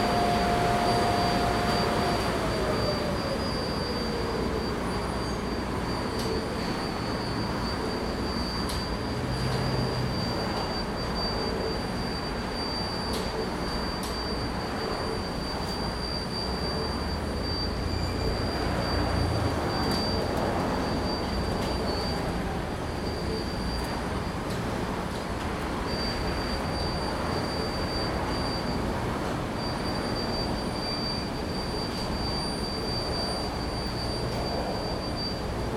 West Hollywood, Kalifornien, USA - car wash
santa monica boulevard, west hollywood; car wash, distant traffic, helicopter;